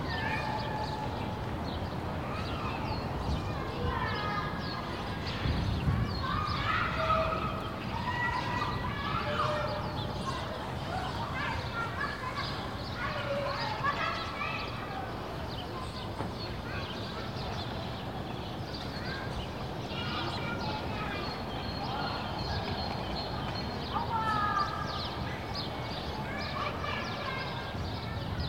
Recorded from the rooftop corner on a (finally) sunny hot day in Berlin.
Sony PCM-100, wide angle